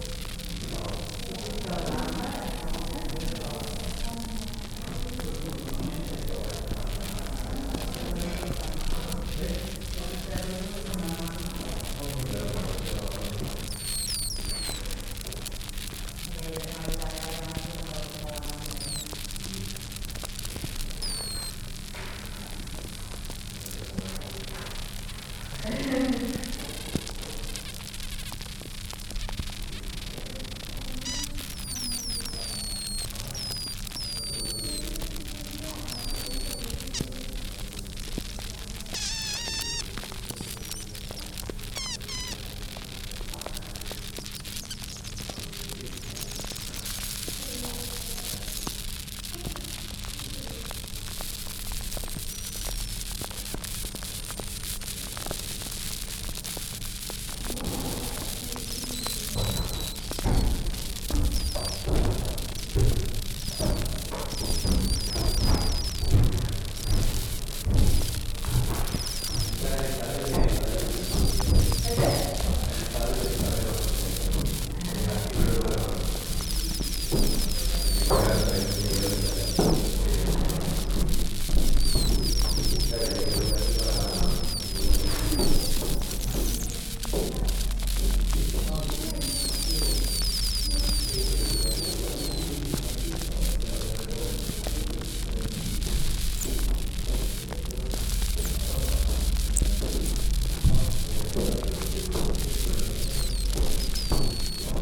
a close-up recording of spontaneous fermentation, as the cantillon brewerys lambic ferments in a large oak cask, and gas and foam escapes around the wooden plug in the top.